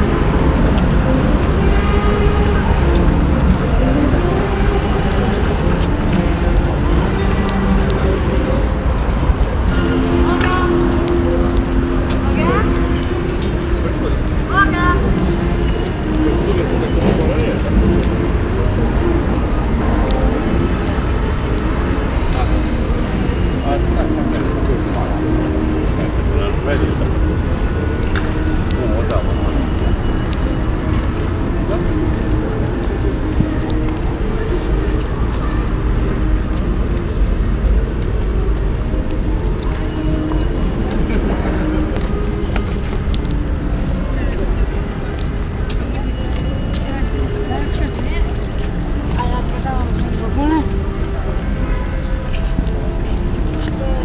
Gdańsk, Polska - ikm picnic 5
Dźwięki nagrano podczas pikniku zrealizowanego przez Instytut Kultury Miejskiej.
Nagrania dokonano z wykorzystaniem mikrofonów kontaktowych.
Poland, August 2018